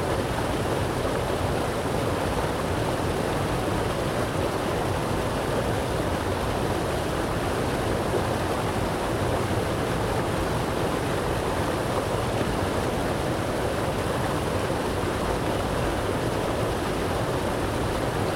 Borowskiego, Gorzów Wielkopolski, Polska - Old water dam.
Kłodawka river, the old water dam.